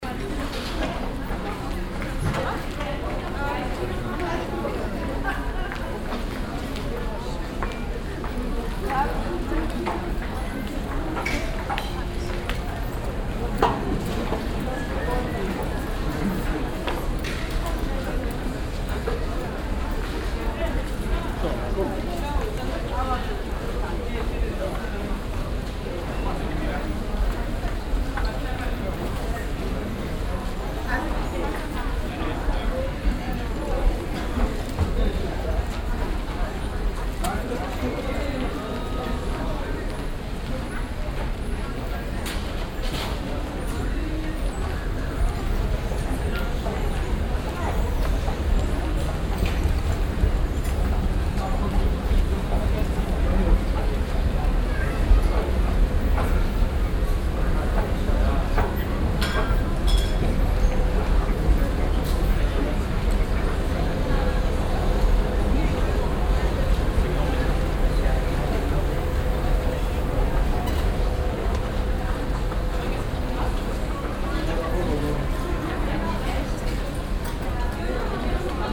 cologne, weltstadthaus, p+c kaufhaus
shopper im glas und stahlambiente des als weltstadthaus bezeichneten p+c warenhauses des Architekten Renzo Piano, nachmittags
soundmap nrw: social ambiences/ listen to the people - in & outdoor nearfield recordings